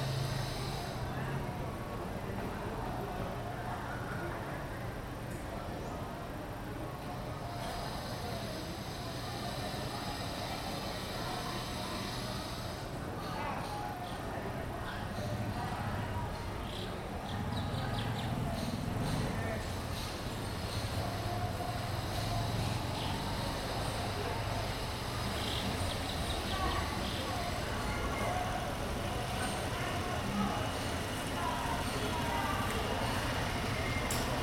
Cra., Mompós, Bolívar, Colombia - Protesta
People protest outside a public building. An street vendor passes by...
April 2022